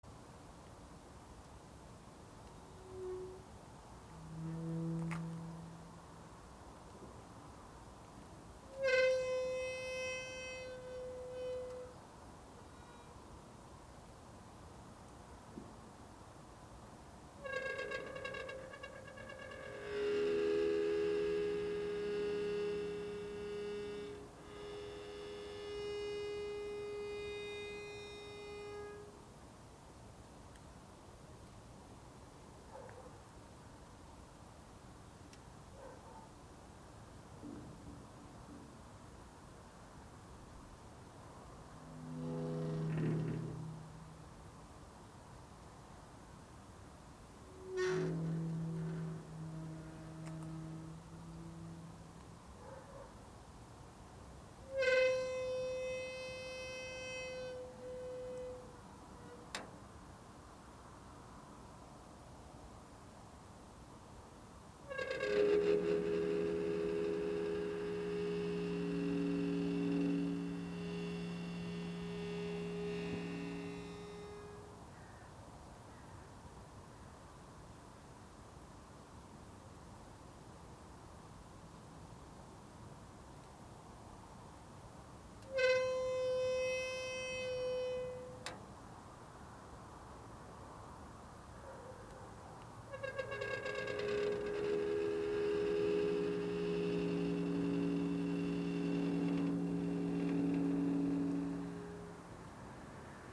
Different perspectives IV - Torre D'Arese, Italy - life in the village - IV A closing perspective
Gate of the cemetery slowly opened and closed by me. Nobody. no animals. none of all the previous perspectives.